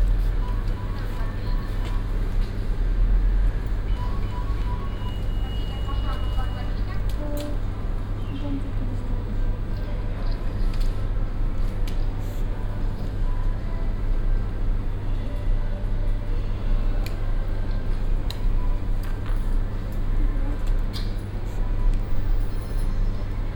Poznan, new bus depot - waiting room
(binaural) waiting room of the new main bus depot in Poznan, located on the ground floor of a big shopping centre. people purchasing tickets for their joruneys. ticket sales person talking to them through a speaker. shopping center sounds coming from afar.